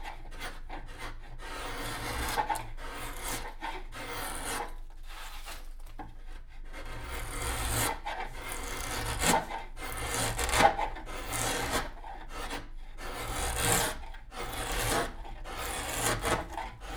Umeå. Violin makers workshop.
Planing the wood (spruce). Binaural mics.